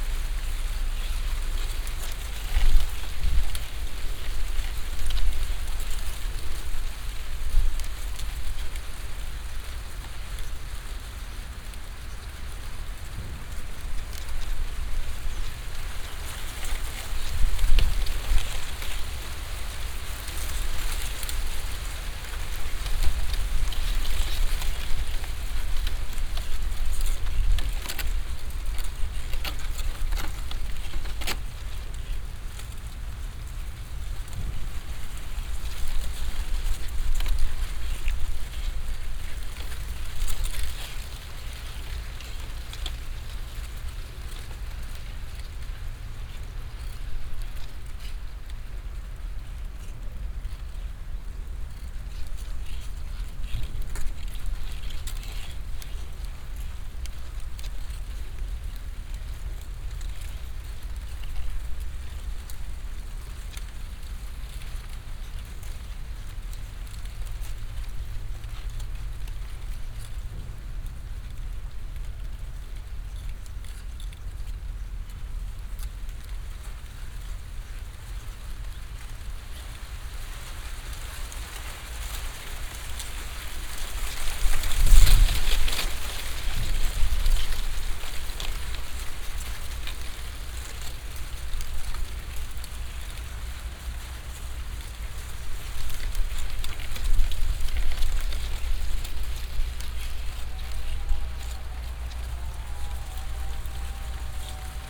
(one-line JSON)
{"title": "Radojewo, Poligonowa road - corn field", "date": "2015-09-25 11:15:00", "description": "(binaural) standing in a wilted corn field among on a breezy day. The leaves rub against each other in the wind making a sort of wooden, very dense rustle. distorted at times. (sony d50 + luhd pm1bin)", "latitude": "52.50", "longitude": "16.94", "altitude": "110", "timezone": "Europe/Warsaw"}